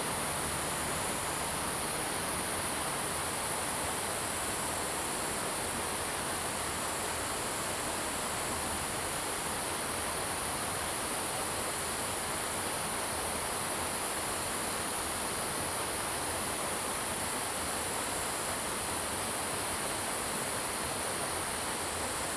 投68鄉道, 埔里鎮桃米里 - Sound of streams and insects
Stream, Sound of insects, Traffic Sound
Zoom H2n MS+XY
Puli Township, Nantou County, Taiwan, 13 July 2016, ~07:00